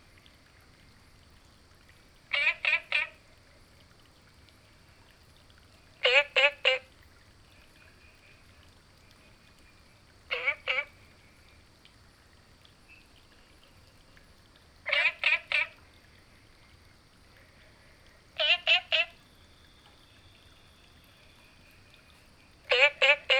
Green House Hostel, Puli Township - Ecological pool
Ecological pool, Frog chirping
Nantou County, Taiwan, 2 September 2015